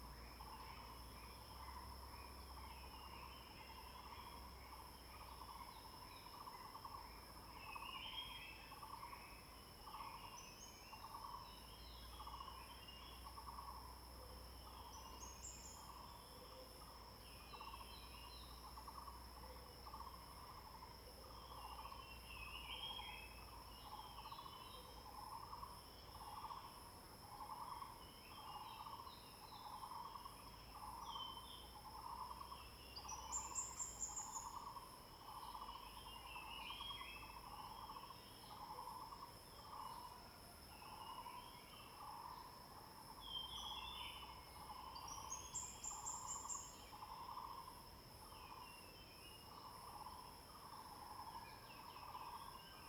Birds singing, Bird sounds
Zoom H2n MS+ XY